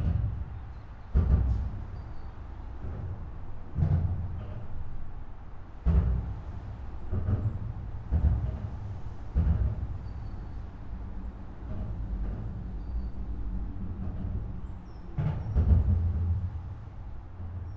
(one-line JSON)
{"title": "Norwich Southern Bypass, Norwich, UK - Underneath A47 Roadbridge", "date": "2021-06-04 11:20:00", "description": "Recorded with a Zoom H1n with 2 Clippy EM272 mics arranged in spaced AB.", "latitude": "52.62", "longitude": "1.37", "altitude": "8", "timezone": "Europe/London"}